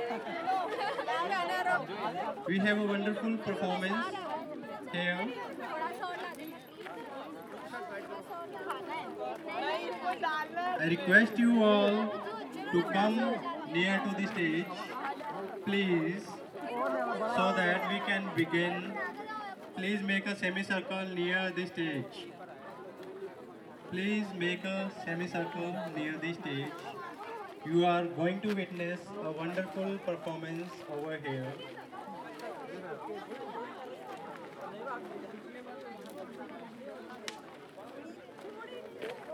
Koyna Hostel, Vasant Kunj, and 6 Park, Jawaharlal Nehru University, New Delhi, Delhi, India - 12 Lohri Festival
Recording of a Lohri festival at JNU University
Zoom H2n + Soundman OKM